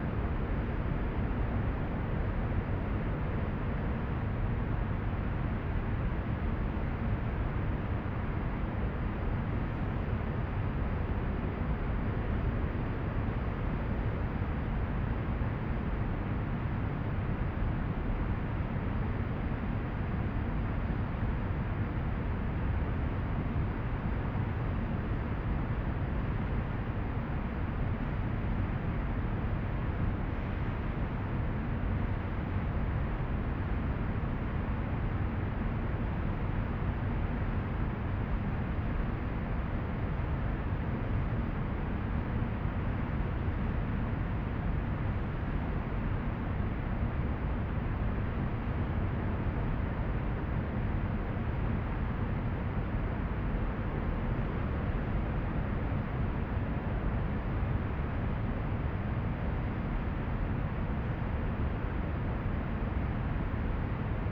Mannesmannufer, Düsseldorf, Deutschland - Düsseldorf, KIT, exhibition hall, center
Inside the KIT exhibition hall in the center. The sound of the traffic underneath the long reverbing hall.
This recording is part of the intermedia sound art exhibition project - sonic states
soundmap nrw - sonic states, social ambiences, art places and topographic field recordings
Düsseldorf, Germany